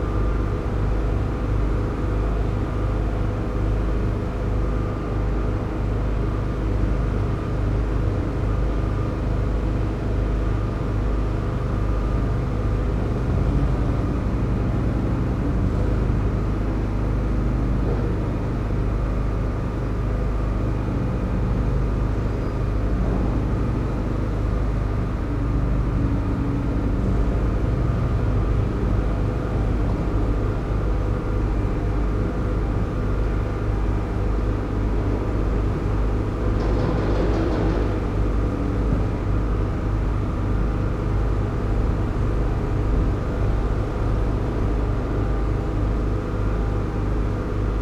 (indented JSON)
{
  "title": "berlin: friedelstraße - the city, the country & me: sewer works",
  "date": "2014-01-27 10:18:00",
  "description": "drone of sewer works site\nthe city, the country & me january 27, 2014",
  "latitude": "52.49",
  "longitude": "13.43",
  "altitude": "46",
  "timezone": "Europe/Berlin"
}